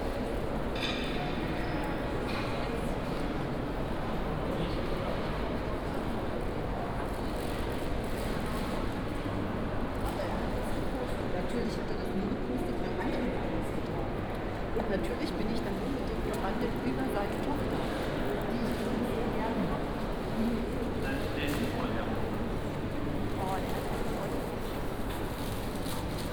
{"title": "dresden, main station, main hall - dresden main station walk", "date": "2011-12-07 18:45:00", "description": "walk through Dresden main station, crossing various departents: main hall, shop areas, platforms (binaural recording)", "latitude": "51.04", "longitude": "13.73", "altitude": "125", "timezone": "Europe/Berlin"}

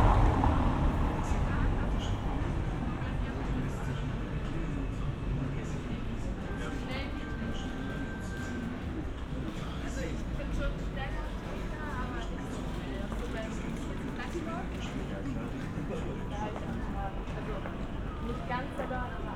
{"title": "berlin: friedelstraße - the city, the country & me: night traffic", "date": "2012-06-21 22:16:00", "description": "street nightlife during the european football championship\nthe city, the country & me: june 21, 2012", "latitude": "52.49", "longitude": "13.43", "altitude": "46", "timezone": "Europe/Berlin"}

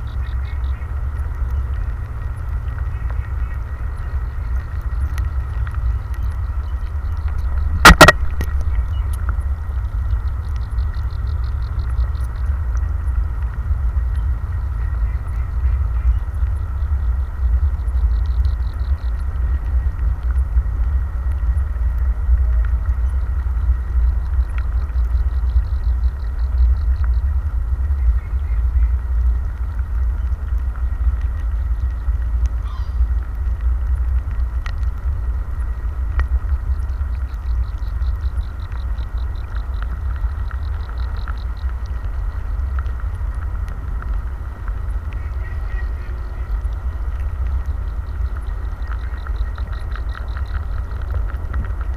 {"title": "Dubelohstraße, Paderborn, Deutschland - Fischteiche unter Wasser", "date": "2020-07-14 18:00:00", "description": "Mayor Franz-Georg\nwhen you imagined\nthis place\nover a hundred years ago\nas the favourite walk\nof the people\nand the adornment\nof the town\ndid you forehear\nthe noise of the cars\nand the trains\neven deep down\nin the lake?\nWhat are the swans\nthe geese and the ducks\ndreaming about?\nWhat were you doing\nup there in the elm\nand what did you hear\nwhen you fell?\nCan you hear me?", "latitude": "51.74", "longitude": "8.74", "altitude": "109", "timezone": "Europe/Berlin"}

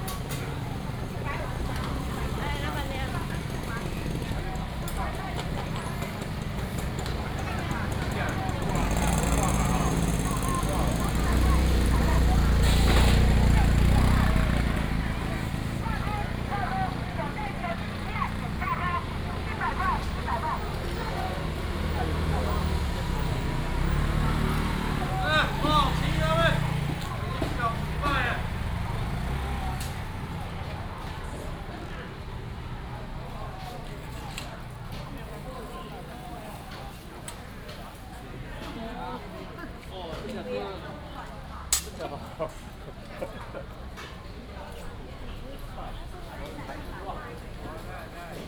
{"title": "卓蘭鎮公有零售市場, Miaoli County - Walking in the market area", "date": "2017-09-19 06:49:00", "description": "Walking in the market area, vendors peddling, Binaural recordings, Sony PCM D100+ Soundman OKM II", "latitude": "24.31", "longitude": "120.83", "altitude": "340", "timezone": "Asia/Taipei"}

